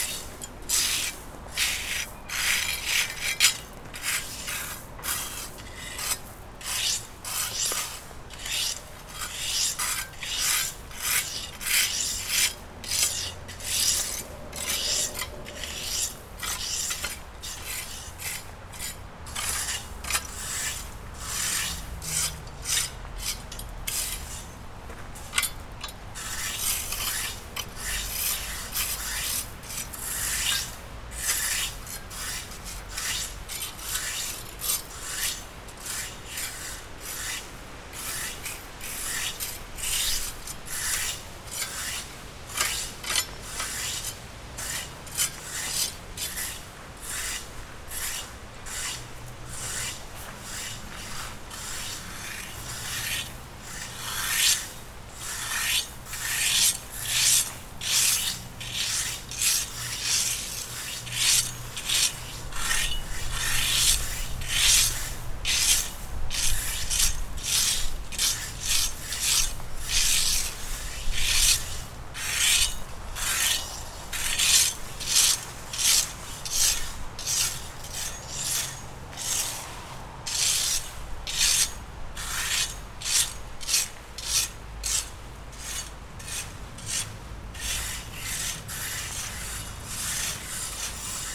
Kirkegade, Struer, Danmark - Two men raking gravel and fallen leaves in the graveyard
Two men raking gravel and fallen leaves in the graveyard.